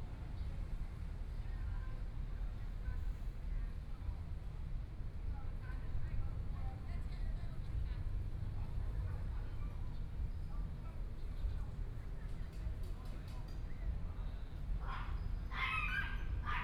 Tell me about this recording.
Evening in the park, Binaural recordings, Zoom H4n+ Soundman OKM II